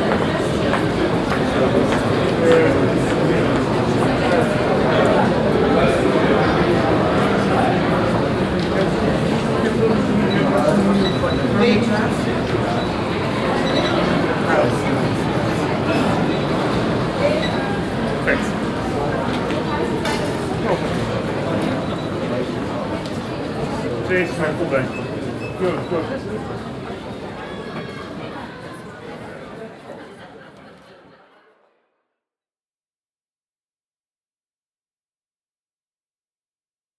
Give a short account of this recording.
At the arrival zone of the fair. The sound of people talking, suitcases on rollers, announcements and the fair radio in the morning time. soundmap d - social ambiences and topographic field recordings